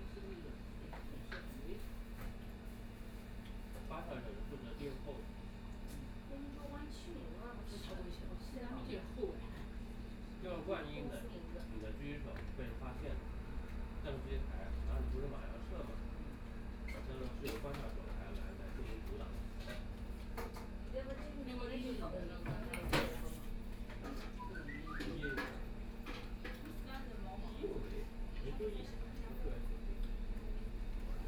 Siping Road, Shanghai - In the restaurant
In the restaurant, Binaural recording, Zoom H6+ Soundman OKM II